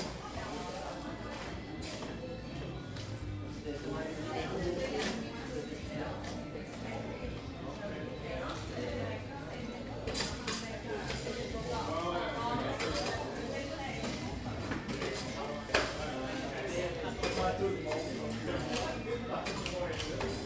{"title": "Le Plateau-Mont-Royal, Montreal, QC, Canada - Cafe Rico", "date": "2014-06-01 14:06:00", "description": "Recording of inside activity at Cafe Rico.", "latitude": "45.53", "longitude": "-73.58", "altitude": "50", "timezone": "America/Montreal"}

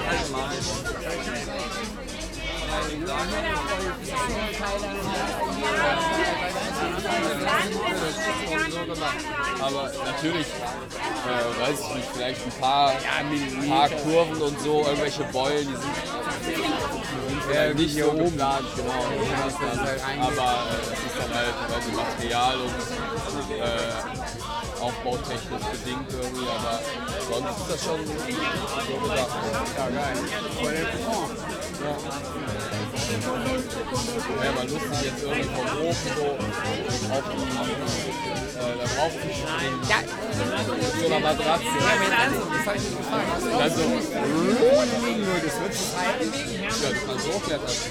{"title": "köln, hans böckler platz - temporary bamboo house, opening", "date": "2009-09-26 01:25:00", "description": "opening party at a temporary house built of bamboo and fabric. the concept behind seems to be about the reoccupation of public spaces.", "latitude": "50.94", "longitude": "6.93", "altitude": "52", "timezone": "Europe/Berlin"}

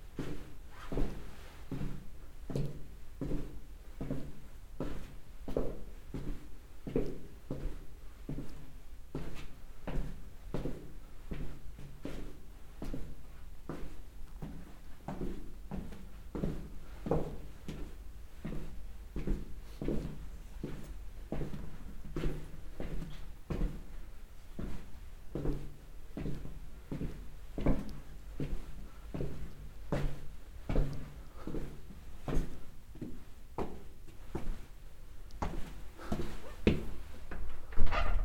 {"title": "dortmund, reinoldi kirche, hour bell", "description": "inside the bell tower, the hour bell of the church then steps down the bell tower and the closing of the tower door\nsoundmap nrw - social ambiences and topographic field recordings", "latitude": "51.51", "longitude": "7.47", "altitude": "96", "timezone": "Europe/Berlin"}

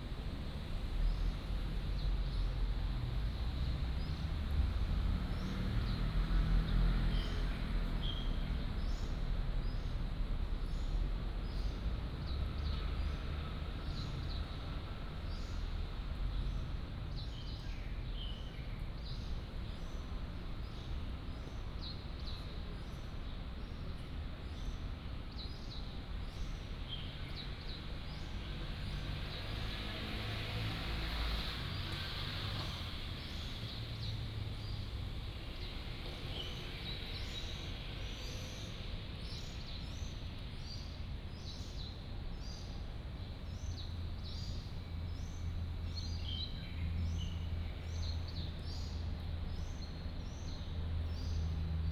{"title": "安東公園, Da'an District - in the Park", "date": "2015-06-04 15:06:00", "description": "in the Park", "latitude": "25.03", "longitude": "121.54", "altitude": "20", "timezone": "Asia/Taipei"}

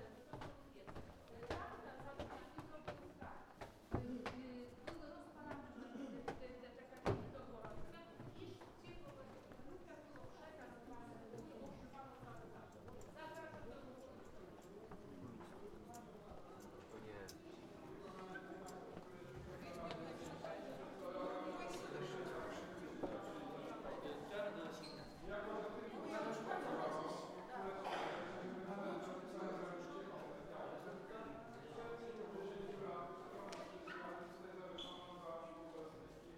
{"title": "Trakai Historical National Park, Trakai, Lithuania - Castle", "date": "2011-08-06 10:27:00", "latitude": "54.65", "longitude": "24.93", "altitude": "145", "timezone": "Europe/Vilnius"}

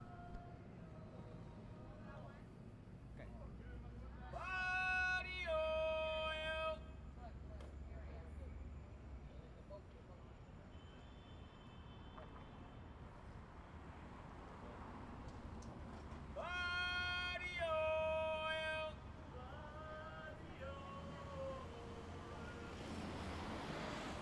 {"title": "Tenderloin, San Francisco, CA, USA - Body Oil Man and FLine", "date": "2012-10-14 12:12:00", "description": "A TL staple, body-oil man calling on Market street.", "latitude": "37.78", "longitude": "-122.41", "altitude": "18", "timezone": "America/Los_Angeles"}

